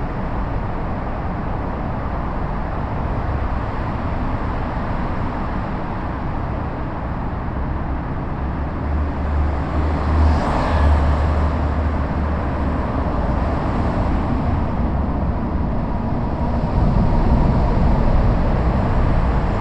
& Dean Keaton, Austin, TX, USA - Storm Drain Under Interstate
Recorded with a pair of DPA 4060s and a Marantz PMD661